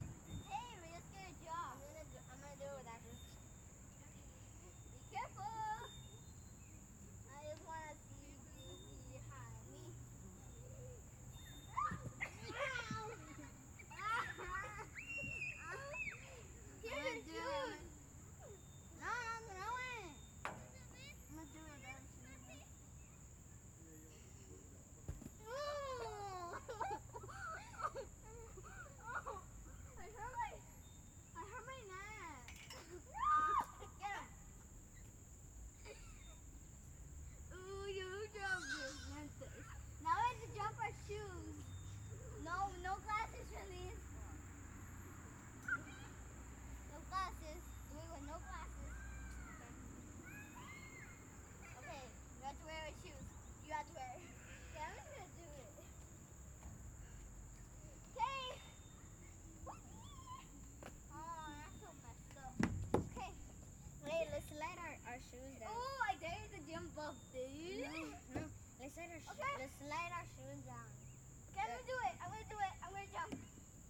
Wilshire Park, Gainesville, GA USA - The Playground
Children playing at a city park playground. Some cars occasionally drifting past.
7 August, 19:50